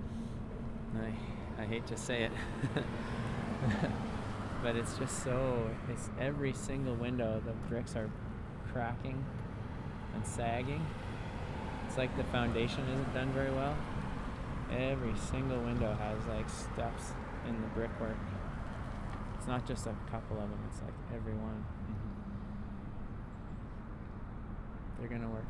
Downtown, Calgary, AB, Canada - King Eddy - Tear it down
This is my Village
Tomas Jonsson
9 April 2012, 10:11